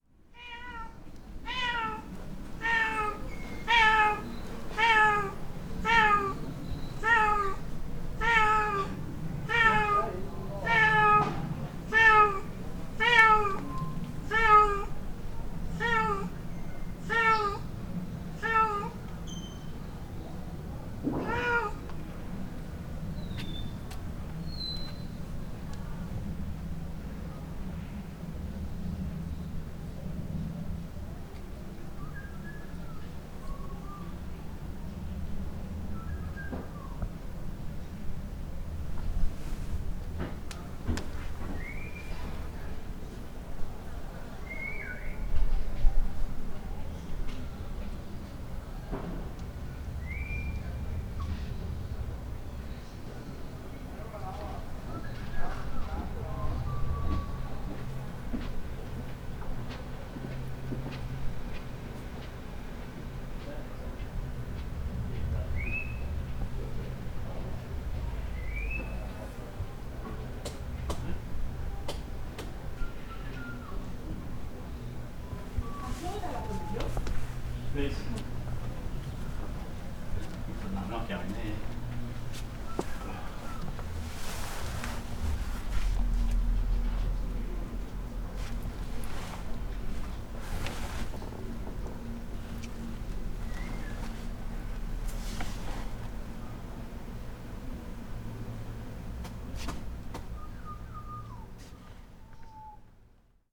back street. impatient cat waiting to be let inside. a whistling man working nearby. sounds of tourist activity coming from the "main" street.